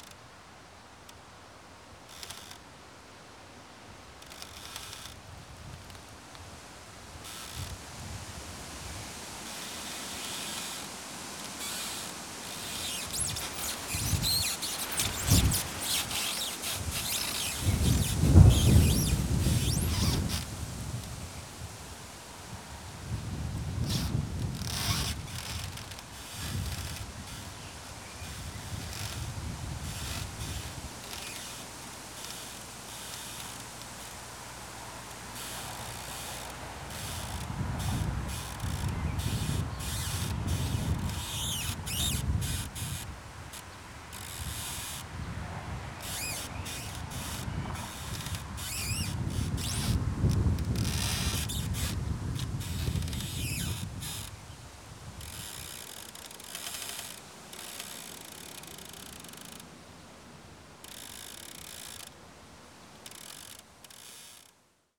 Poznan, Umoltowo, Bronislawa road - elastic fence
a fence made of a thigh, plastic netting squeaking and creaking in the wind.
Poznan, Poland, 22 June 2014